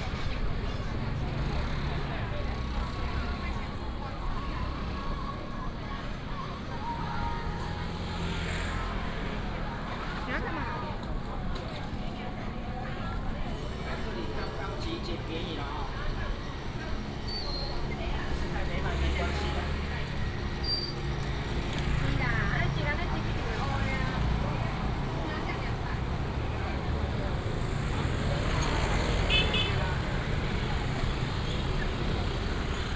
Zhongzheng Rd., Huwei Township - Walking in the market
Walking in the market, motorcycle, Vendors
Yunlin County, Taiwan